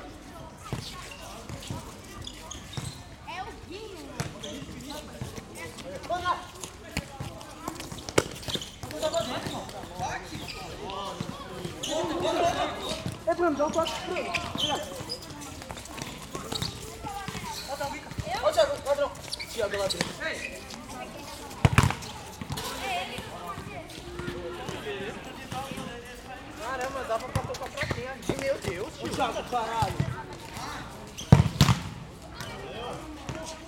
Aclimação, São Paulo - Young Brasilians playing soccer on a small playground
Close to the Aclimaçao Park, a few young people are playing soccer on a small playground (used as a basket playground too).
Recorded by a binaural Setup of 2 x Primo Microphones on a Zoom H1 Recorder
São Paulo - SP, Brazil